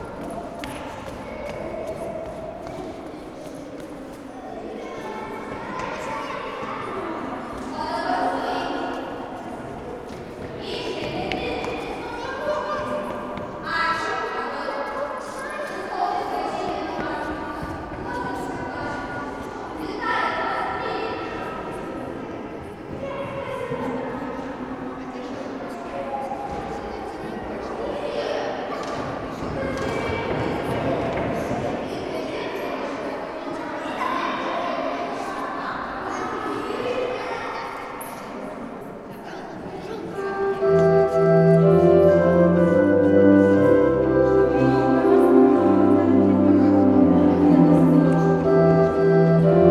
Lithuania, Utena, children rehearsal in the church
children chorus rehearsal in the church